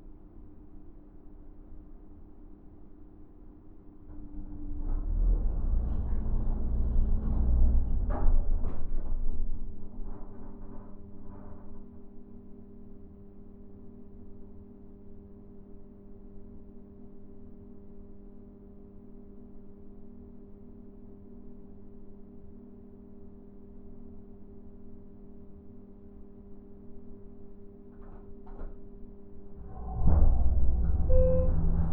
Richards Rd, Oakland, CA, USA - Elevator in Mills Music Hall
Recording taken by TASCAM from inside of the elevator as it was being used during high traffic.